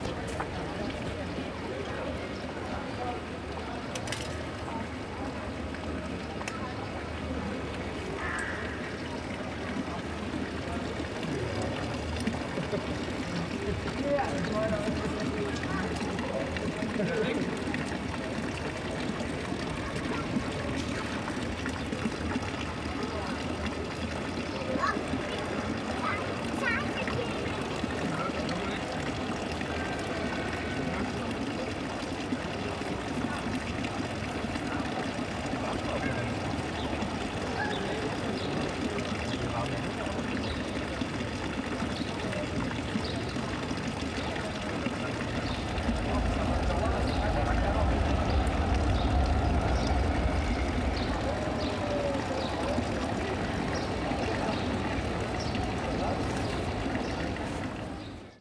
Sonic anti-vandal-system, Hauptplatz, Innere Stadt, Graz, Österreich - Hauptplatz, Graz - 16.5kHz acoustic anti-vandal system at the fountain
Hauptplatz, Graz - 16.5kHz acoustic anti-vandal system at the fountain.
Installed by local authorities there is a sonic anti-vandal system running at the fountain on Graz Hauptplatz. It consists of several small speakers which are constantly emitting high frequency impulses of around 16.500 Hz. Do you hear it?
As we grow older, we tend to loose the ability to hear those high frequencies. Therefore, anti-vandal-systems such as this - a similar model is the better known Mosquito MK4 - are intended to affect teenagers in particular. The annoying sound is supposed to drive them away.
However, this particular device seems to be quite ineffective. When I got there, there were several teenagers hanging around at the fountain. Even if they heard it, they said that they weren't bothered by the sound.
Please note that audibility of this sound may also differ depending on what playback system you use! Laptop speakers are not the best option.